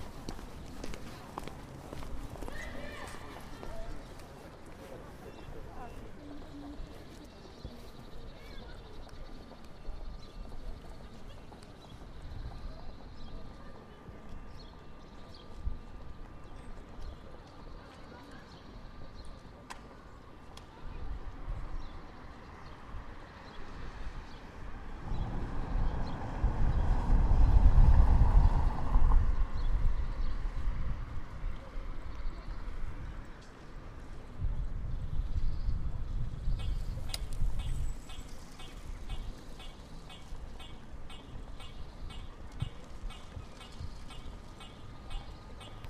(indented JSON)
{
  "title": "Centrum, Bialystok, Poland - pedestrian crossing",
  "date": "2013-04-14 12:15:00",
  "latitude": "53.13",
  "longitude": "23.16",
  "altitude": "138",
  "timezone": "Europe/Warsaw"
}